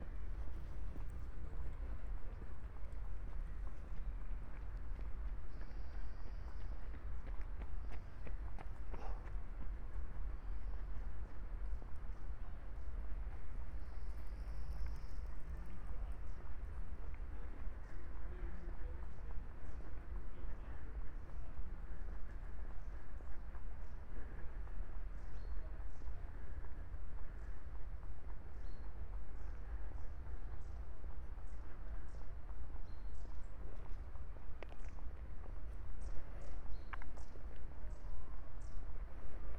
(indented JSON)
{
  "title": "Ascolto il tuo cuore, città. I listen to your heart, city. Chapter CXIII - December afternoon at Valentino park in the time of COVID19: soundwalk",
  "date": "2020-12-17 13:45:00",
  "description": "\"December afternoon at Valentino park in the time of COVID19\": soundwalk\nChapter CXLVIII of Ascolto il tuo cuore, città. I listen to your heart, city\nThursday, December 17th 2020. San Salvario district Turin, to Valentino, walking in the Valentino Park, Turin, about six weeks of new restrictive disposition due to the epidemic of COVID19.\nStart at 1:45 p.m. end at 2:36 p.m. duration of recording 50’48”\nThe entire path is associated with a synchronized GPS track recorded in the (kmz, kml, gpx) files downloadable here:",
  "latitude": "45.06",
  "longitude": "7.69",
  "altitude": "221",
  "timezone": "Europe/Rome"
}